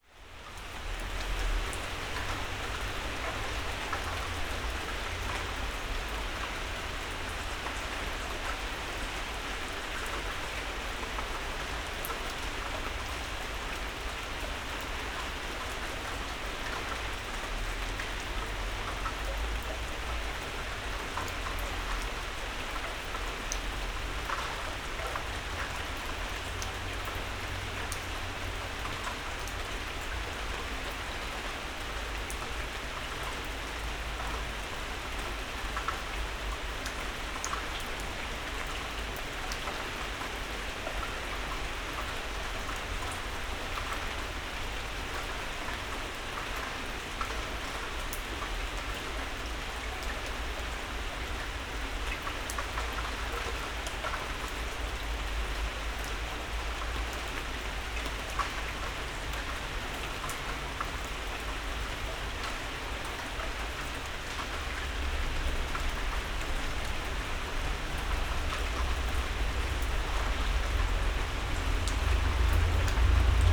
the city, the country & me: june 5, 2012
99 facets of rain